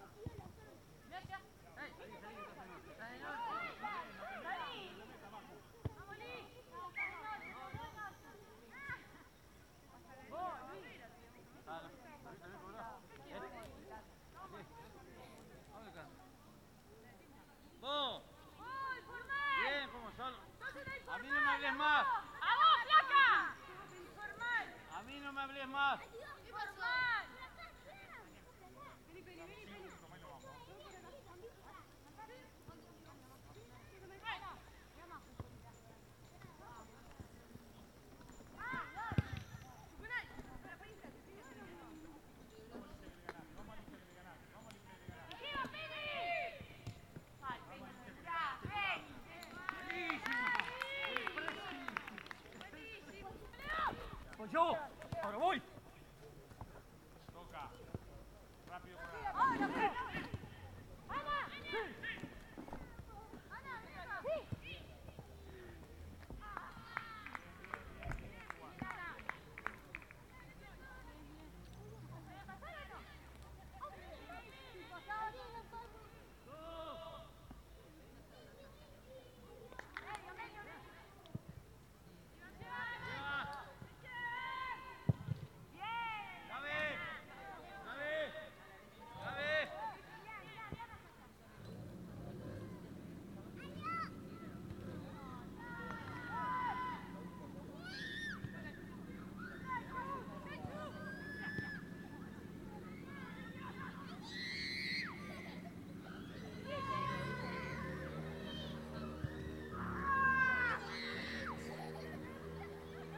Pernambuco, Montevideo, Departamento de Montevideo, Uruguay - Montevideo - Uruguay - Stade du Cerro
Montevideo - Uruguay
Stade du quartier du Cerro
Entrainement de football - Ambiance